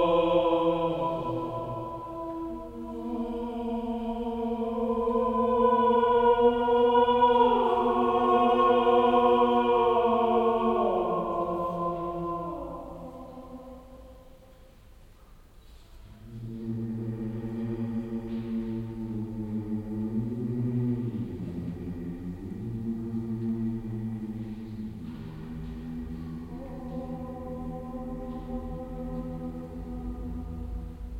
ausschnitt aus vokalem ausstellungseröffnungskonzert mit applaus
soundmap nrw:
social ambiences/ listen to the people - in & outdoor nearfield recordings